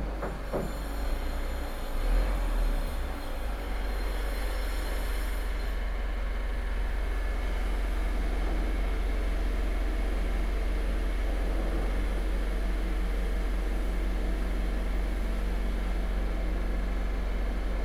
Beitou, Taipei - Being renovated house
Beitou District, Taipei City, Taiwan, 15 October 2012